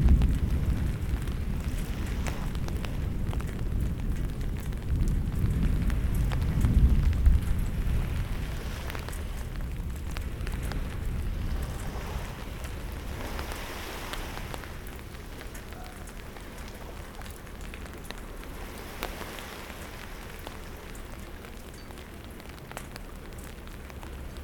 {"title": "Sestri Levante, Metropolitan City of Genoa, Italie - Rain and thunderstorm and sea at the Bay of Silence", "date": "2016-10-27 22:45:00", "description": "Under an umbrella, in front of the sea. Binaural sound.\nSous un parapluie, en face de la mer. Son pris en binaural.", "latitude": "44.27", "longitude": "9.39", "altitude": "9", "timezone": "Europe/Rome"}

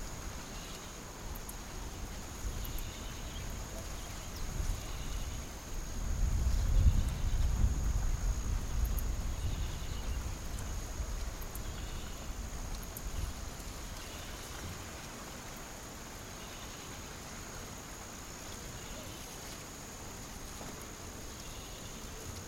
{"title": "Morona-Santiago, Ecuador - Wakambeis ambiance", "date": "2016-02-20 12:00:00", "description": "While recording a documentary, I could capture this ambiance from a town into the Ecaudorian rain forest. TASCAM DR100", "latitude": "-3.37", "longitude": "-78.54", "altitude": "1346", "timezone": "America/Guayaquil"}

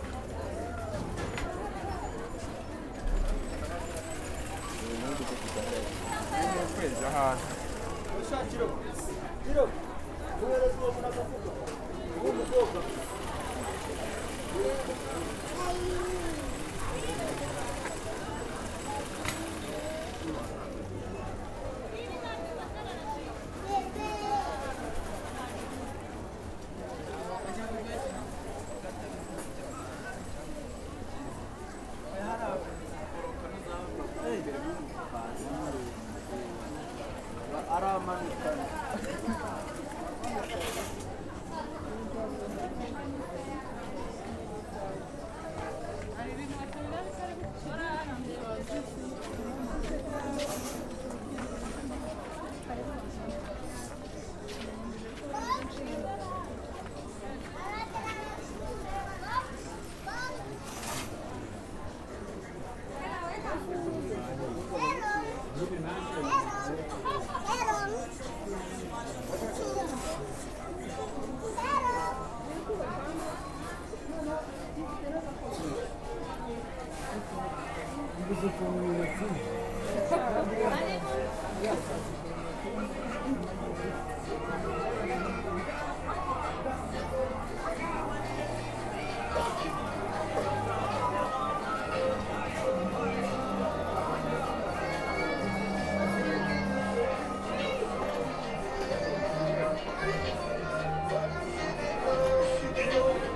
{"title": "Reco&Rwasco Building, Ave du Commerce, Huye, Rwanda - Butare Market", "date": "2018-03-29 12:42:00", "description": "Butare Market, inside:voices, sewing machines, music on the radio, children, outside: cars and motorcycles\nEdirol R9 recorder with built-in stereo microphone", "latitude": "-2.60", "longitude": "29.74", "altitude": "1748", "timezone": "Africa/Kigali"}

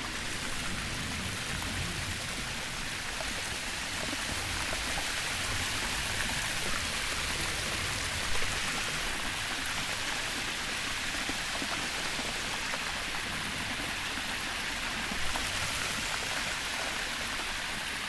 {"title": "caprauna, fereira, drain of dam", "date": "2009-07-24 23:40:00", "description": "soundmap international: social ambiences/ listen to the people in & outdoor topographic field recordings", "latitude": "44.11", "longitude": "7.97", "altitude": "793", "timezone": "Europe/Berlin"}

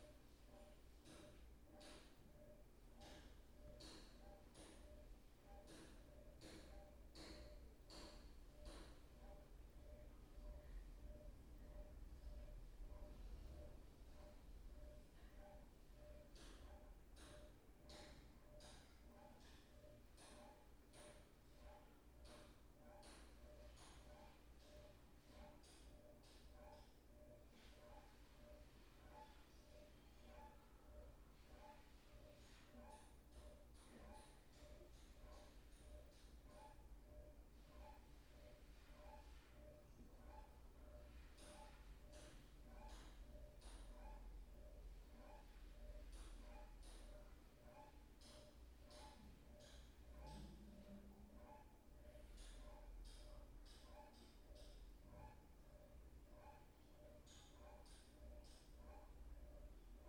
{"title": "R. dos Bancários - Mooca, São Paulo - SP, 03112-070, Brasil - Suburb House", "date": "2019-04-24 14:01:00", "description": "this audio was recorded on a suburb house located on a uncrowded street, the audio intent is build a sound design wich relates a calm house. The audio contains construction tools, washing machine and normal houses sounds.", "latitude": "-23.56", "longitude": "-46.60", "altitude": "753", "timezone": "America/Sao_Paulo"}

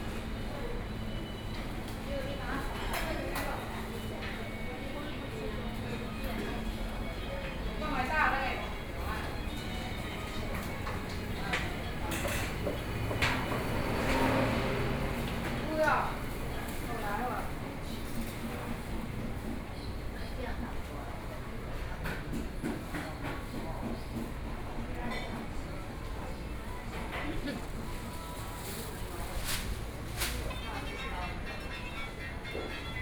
{"title": "中山區桓安里, Taipei City - Walking in the alley", "date": "2014-04-27 11:01:00", "description": "Walking in the alley, Walking through the market, Road repair and construction site noise\nSony PCM D50+ Soundman OKM II", "latitude": "25.06", "longitude": "121.52", "altitude": "15", "timezone": "Asia/Taipei"}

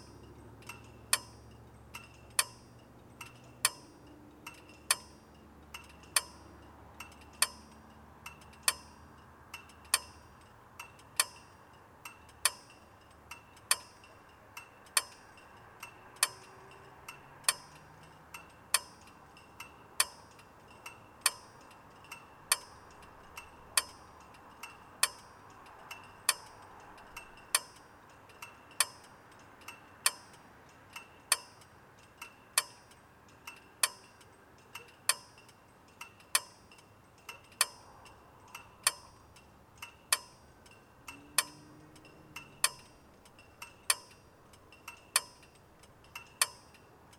{"title": "Mildura, VIC, Australia - Tinkling traffic lights at night", "date": "2015-05-05 22:00:00", "description": "Recorded with an Olympus LS-5.", "latitude": "-34.20", "longitude": "142.15", "altitude": "59", "timezone": "Australia/Melbourne"}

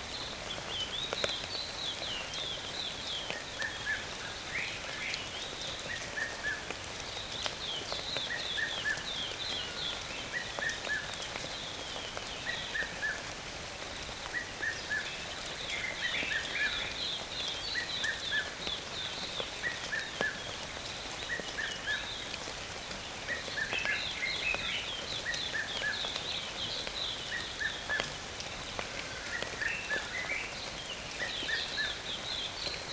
{"title": "Linden, Randburg, South Africa - Birds singing in the rain", "date": "2021-12-27 04:40:00", "description": "Early morning. Light rain. various birds. EM172's on a Jecklin Disc to H2n.", "latitude": "-26.14", "longitude": "28.00", "altitude": "1624", "timezone": "Africa/Johannesburg"}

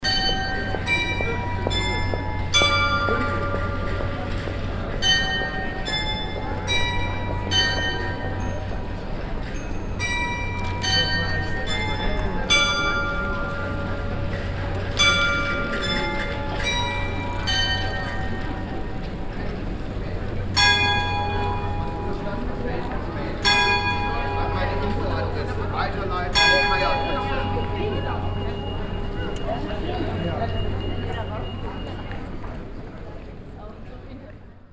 {"title": "essen - essen, kettwiger street, glockenspiel", "date": "2011-06-08 23:53:00", "description": "Der Klang des traditionellen, mechanischem Glockenspiels der Firma Deiter, welches hier stündlich erklingt.\nThe traditional mechanic bellplay playing each hour inside the shopping zone. People passing by.\nProjekt - Stadtklang//: Hörorte - topographic field recordings and social ambiences", "latitude": "51.45", "longitude": "7.01", "altitude": "91", "timezone": "Europe/Berlin"}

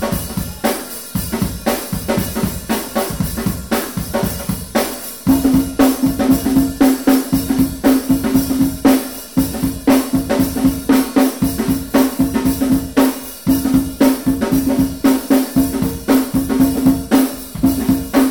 {"title": "Gradska loza, Kastav, Bumerang band", "date": "2008-07-16 19:35:00", "description": "Bumerang band (Zagreb), HR, gig.\nYou can hear marimbas and various percussion instrument in a medieval solid rock amphitheater with a wooden roof.", "latitude": "45.37", "longitude": "14.35", "altitude": "353", "timezone": "Europe/Zagreb"}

